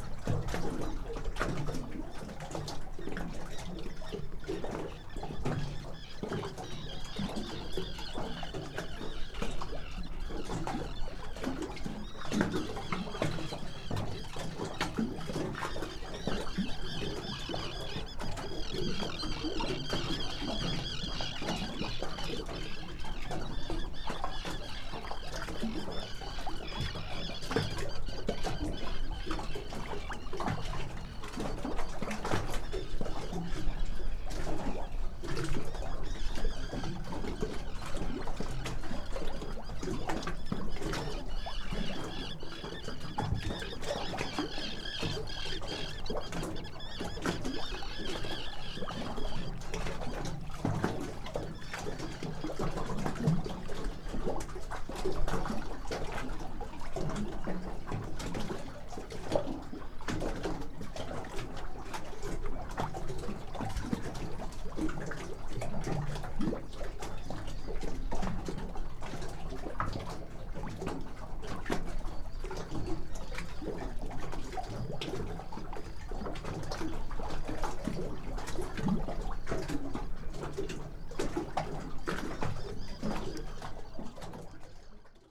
Lithuania, Dusetos, on the pontoon
standing on the pontoon footbridge